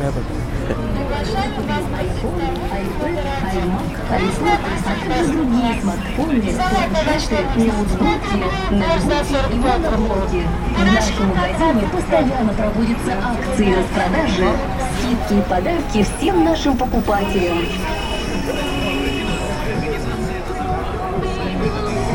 Sennaya Square, Sankt-Peterburg, Russia - Sennaya square
Sennaya square is a large public transportation knot and a trading area with many small retail shops.
On this recording you hear audio commercials and music coming from speakers mounted outside stores blending with sounds of footsteps, conversations, street lights signals and traffic noise. It is an example of a dense urban soundscape, lo-fi in R. Murray Schafer's terms, but vibrant and culturally interesting.
20 January 2018, 15:00